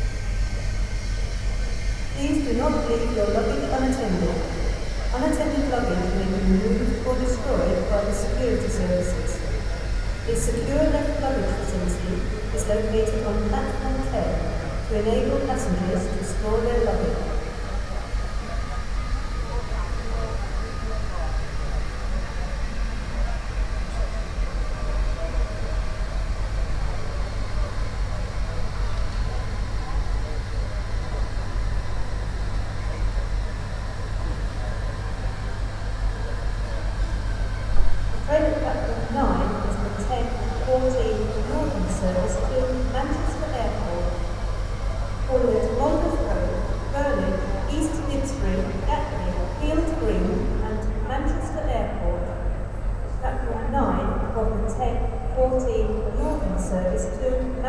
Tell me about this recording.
A cold Saturday morning, drinking coffee, waiting for train.